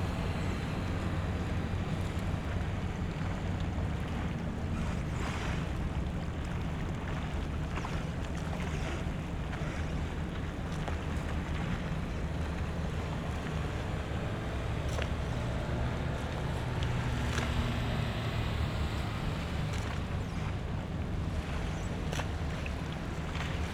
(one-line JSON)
{"title": "Molo, Punto Franco Vecchio, Trieste - gear squeeking, ship drone", "date": "2013-09-07 20:05:00", "description": "ambience at Molo 4, Punto Franco Vecchio. light waves, a squeeking ship at the landing stage, drone of a boat leaving the harbour.\n(SD702, AT BP4025)", "latitude": "45.65", "longitude": "13.77", "altitude": "29", "timezone": "Europe/Rome"}